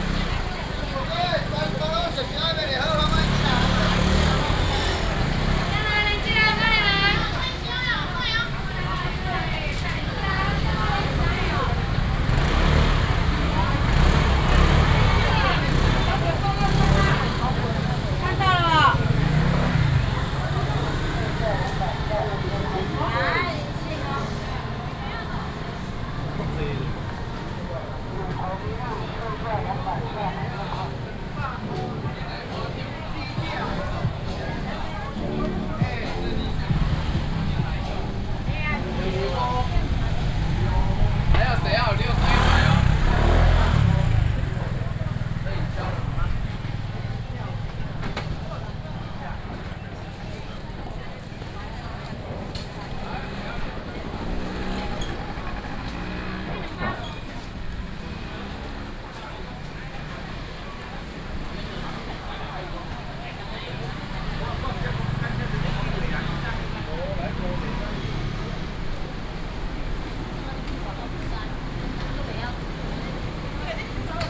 Sec., Xinren Rd., Dali Dist., Taichung City - Traditional market area
traditional market, traffic sound, vendors peddling, Binaural recordings, Sony PCM D100+ Soundman OKM II